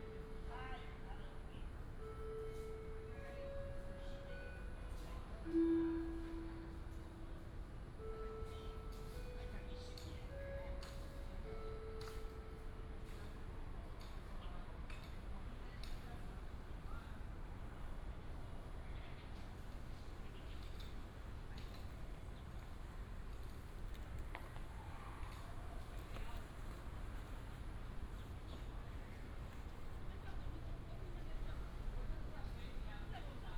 Walking in the park, Binaural recordings, Sony PCM D100+ Soundman OKM II
Hsinchu City, Taiwan, 27 September 2017, 14:48